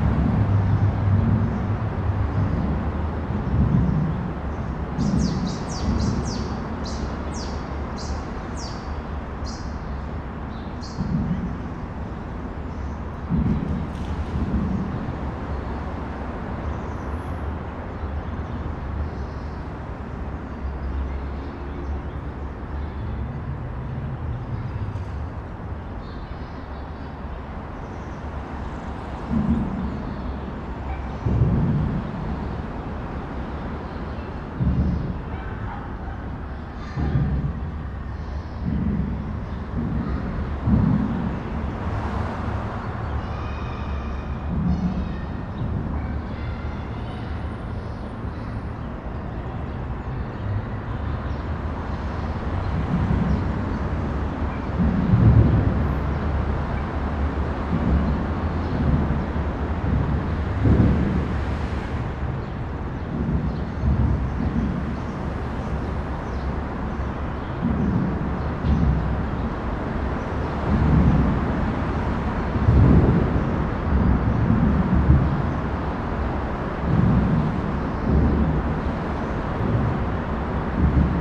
Puente del Alamillo, Sevilla, Spain - Under the Alamillo Bridge, Seville Spain
Recording made under the Alamillo Bridge. You can here birds singing, fish jumping, and traffic overhead
Recorder - Zoom H4N. Microphones - pair of Uši Pro by LOM